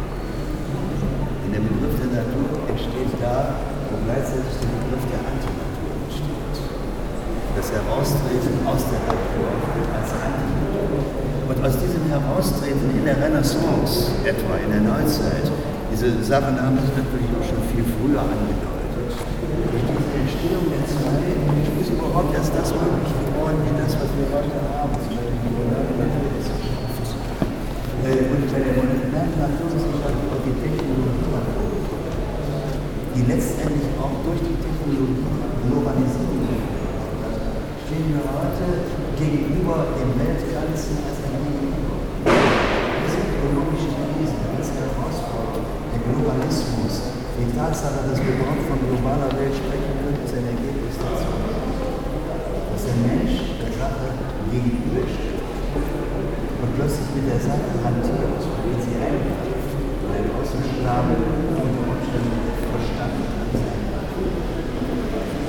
Düsseldorf, Grabbeplatz, museum - düsseldorf, grabbeplatz, k20 museum
inside the K20 museum for contemporary art at the front side during a beuys exhibition. johannes stüttgen a buys student and leader of the FIU giving a speech to some guests in front of the work zeige deine wunden
soundmap d - social ambiences, art spaces and topographic field recordings
Düsseldorf, Germany, 24 January 2011, 15:04